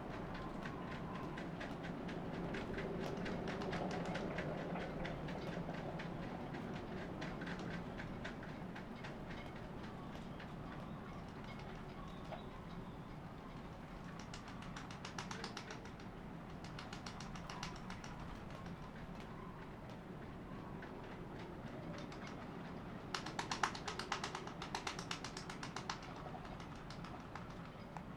workum, het zool: marina, berth h - the city, the country & me: rainy morning
rainy morning aboard
the city, the country & me: june 23, 2015
Workum, The Netherlands